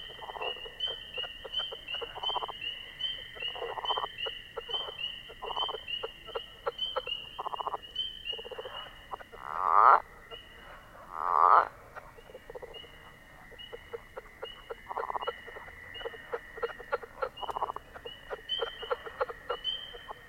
leopard frogs, spring peepers and Fowler's toads (FostexFR2LE AT3032)